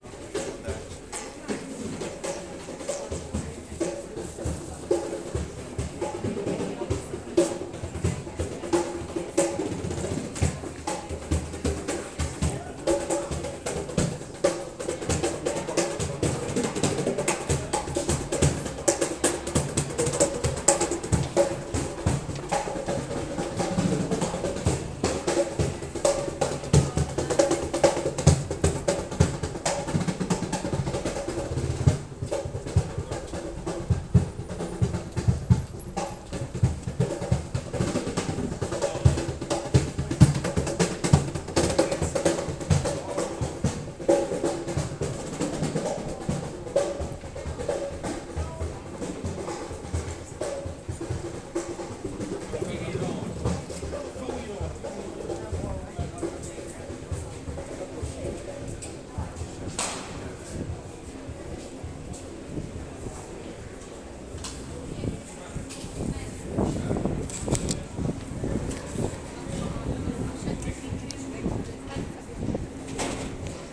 Erzsébetváros, Budapest, Magyarország - Drummer busking
A drummer busking in the Deak sq. underpass. People coming and going.
Király Street, Hungary